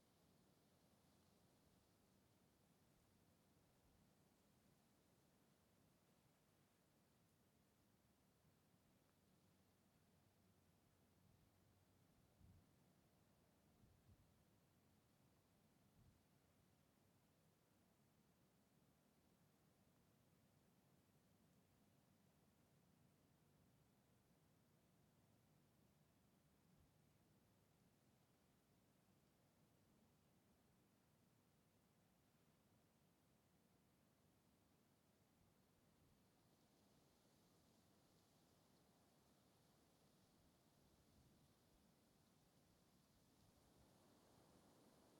Lisburn, Reino Unido - Derriaghy Dawn
Field Recordings taken during the sunrising of June the 22nd on a rural area around Derriaghy, Northern Ireland
Zoom H2n on XY
Lisburn, UK, 22 June, 03:25